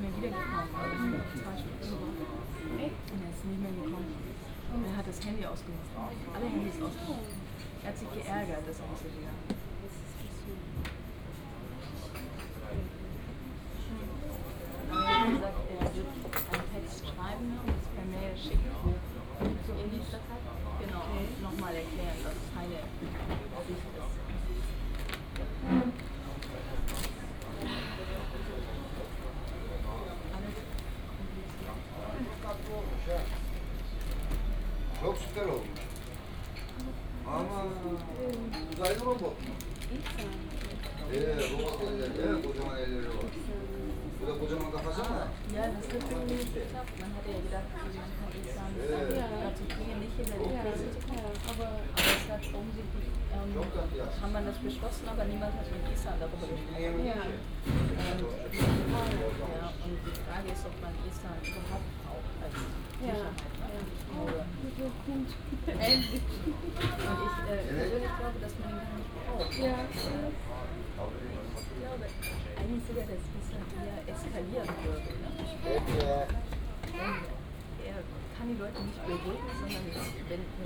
Berlin, Cafe Kotti
cafe kotti, at zentrum kreuzberg, 1 floor above street level, sunday ambience
Berlin, Deutschland, 9 October, 13:00